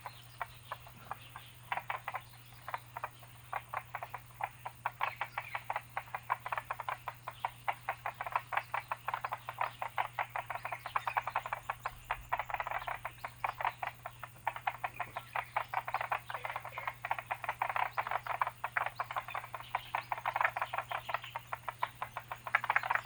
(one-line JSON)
{"title": "草楠濕地農場, 桃米里, Puli Township - Frogs chirping", "date": "2016-04-25 16:07:00", "description": "Frogs chirping, Bird sounds\nZoom H2n MS+XY", "latitude": "23.95", "longitude": "120.92", "altitude": "592", "timezone": "Asia/Taipei"}